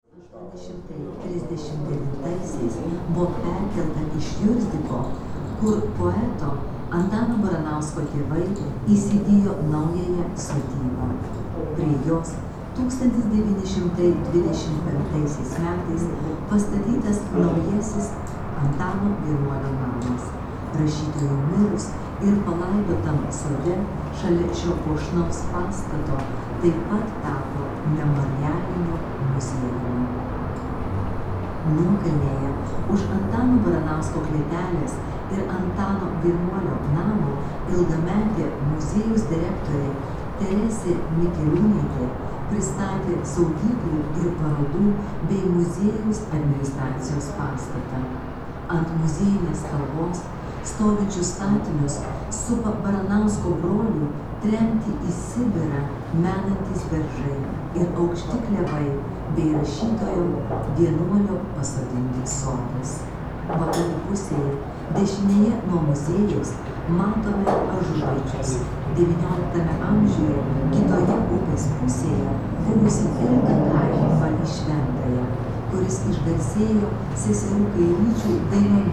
a viewpoint (33 meters in height) on one of the two St. Apostle Matthew church tower